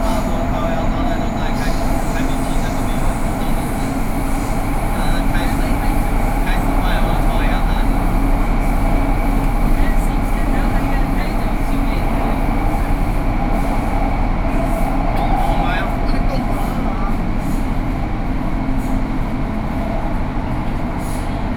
Taipei, Taiwan - Take the MRT
Taipei City, Taiwan, 7 December 2012